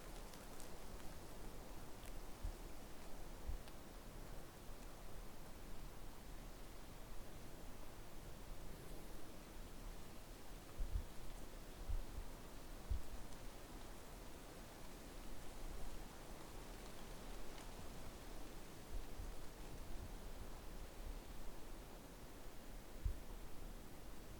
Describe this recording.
lakewise, lakeside, lake sound - a few days in complete silence walking around. The track takes 7 minutes and takes you from watersounds to the silence of the forests. (Recorded with Zoom4HN).